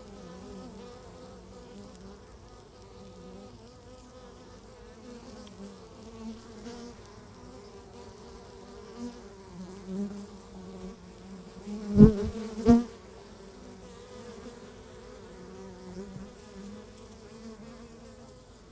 Laren, Nederland - Beehive
Internal mics Zoom H2